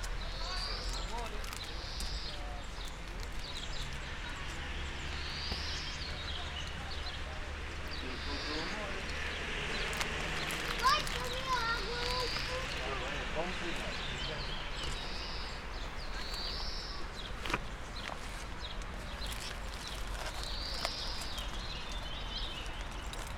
Small garden near a church in Encanrnação, Lisbon. People, birds and traffic. Recorded with a pair of matched primos 172 into a mixpre6.